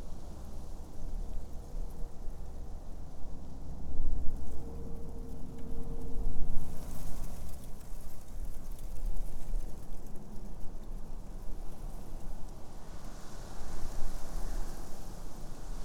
Black Canyon City, Arizona - wind in the thistles
Black Canyon City, Maggie Mine Rd.
"Thistles" were actually dead flowers, dry and rustling in the wind nicely. Distant traffic from I-17 can also be heard about a half a mile away.
16 May 2017, ~15:00